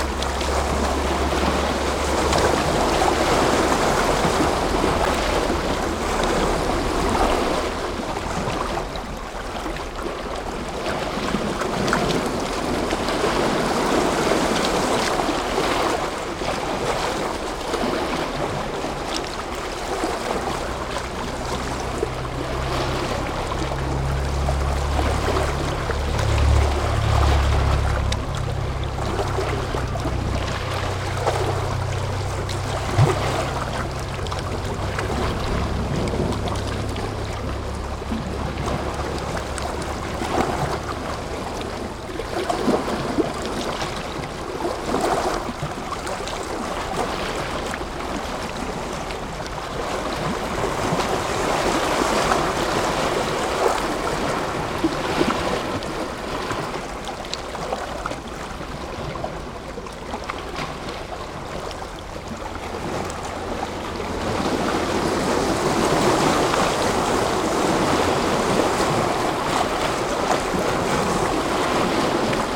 Waves crashing against a rocky shoreline at Lake Biwa north of Chomeiji.